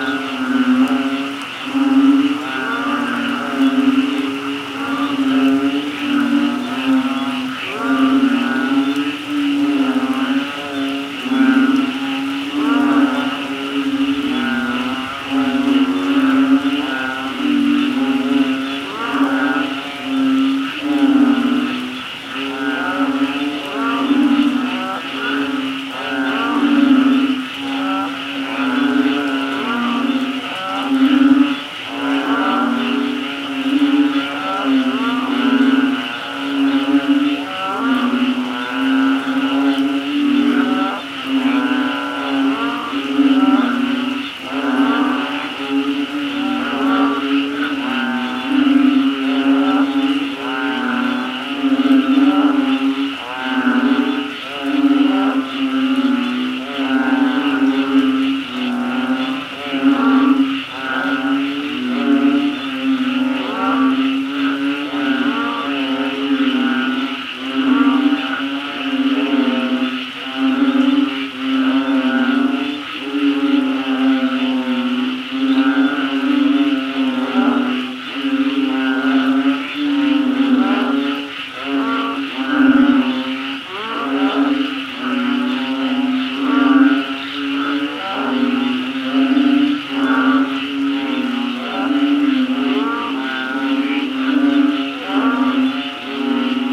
Ban Na Tin (Krabi Province) - Toads and Frogs singing during the night
During the night in the small village of Ban Na Tin (Krabi Province), after the rain, toads and frogs are happy and singing.
Recorded by an ORTF Setup Schoeps CCM4x2 in a Cinela Windscreen
Recorder Sound Devices 633
GPS: 8.0651833, 98.8099667
Sound Ref: TH-181015T03
อ.เมือง Krabi, Thailand